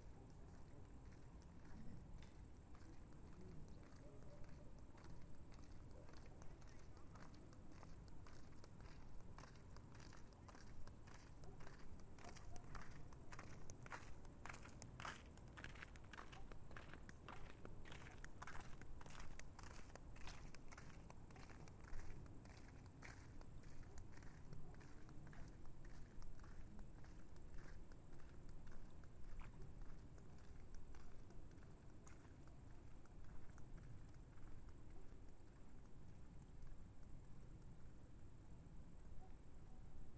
{"title": "Mestni park, Slovenia - eavesdrop", "date": "2012-08-05 21:31:00", "description": "still waters, thongs", "latitude": "46.57", "longitude": "15.65", "altitude": "303", "timezone": "Europe/Ljubljana"}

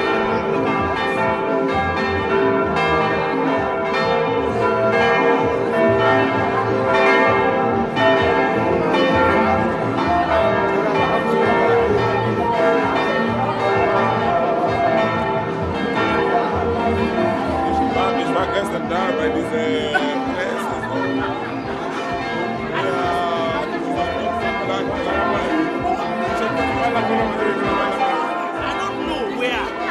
{"title": "Christuskirche, Hamm, Germany - Yes Afrika Festival bells...", "date": "2014-09-27 19:12:00", "description": "… a further mix of bells and Festival sounds…. … it’s the Yes Afrika Festival 2014…", "latitude": "51.67", "longitude": "7.79", "altitude": "65", "timezone": "Europe/Berlin"}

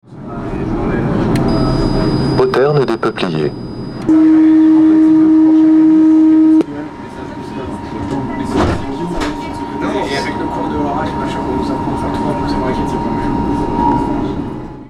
{"title": "RadioFreeRobots T3 Poterne des peupliers", "latitude": "48.82", "longitude": "2.35", "altitude": "41", "timezone": "GMT+1"}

